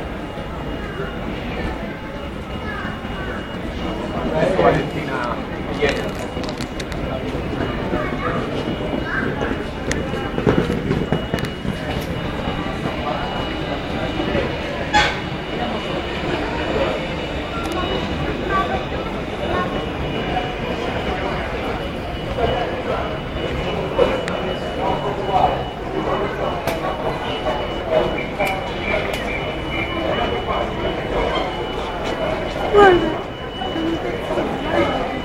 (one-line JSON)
{"title": "Napoli, stazione centrale. Aspettando il treno", "date": "2010-08-13 07:30:00", "description": "Waiting for the train in Napoli, central railways station", "latitude": "40.85", "longitude": "14.27", "altitude": "14", "timezone": "Europe/Rome"}